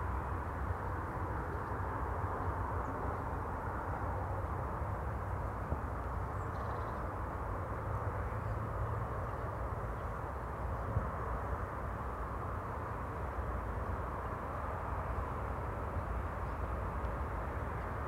Contención Island Day 20 outer southwest - Walking to the sounds of Contención Island Day 20 Sunday January 24th
The Drive Moor Place Woodlands Oaklands Avenue Oaklands Grandstand Road Town Moor High Street Moor Crescent The Drive
A mix of ash hawthorn and oak
A robin moves through
the dense branches of the hawthorns
A tit calls one carrion crow
Bright sunshine bounces off frosted grass.
A plane takes off
four miles away and clearly audible
Walkers climb the hill
up and more circumspectly down